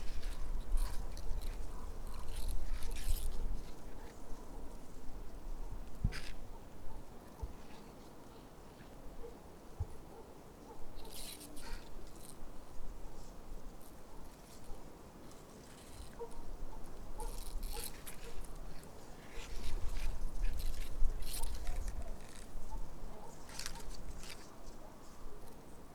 Lithuania, Utena, reed leaves
dried reed leaves rubbing one to another
January 2013